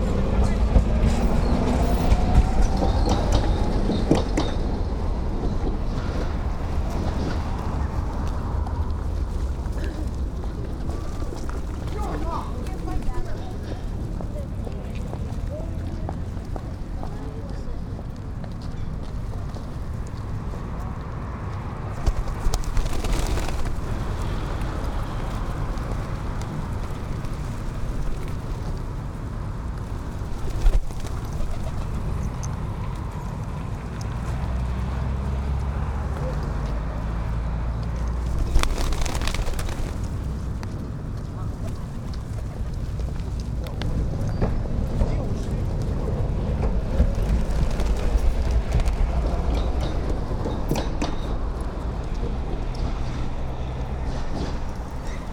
pigeons and trams behind Baltimarket
trams passing by, pigeons and locals walking behin the Baltimarket at Kopli street. (jaak sova)